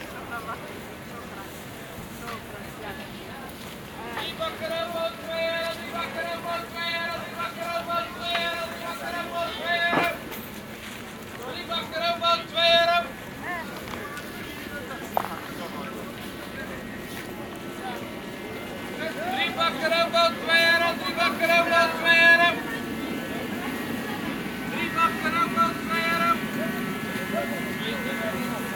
Delfshaven Market- Grote Visserijstraat, Rotterdam, Netherlands - Delfshaven Market
Recorded in winter during the saturdays regular market. Vendors, seagulls, cars, snow, plastic bags
Zuid-Holland, Nederland